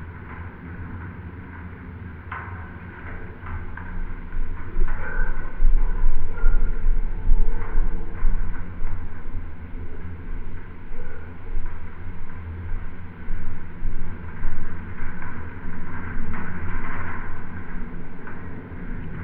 Antalgė, Lithuania, sculpture Pegasus
Open air sculpture park in Antalge village. There is a large exposition of metal sculptures and instaliations. Now you can visit and listen art. Recorded with geophone and hydrophone used as contact sensor.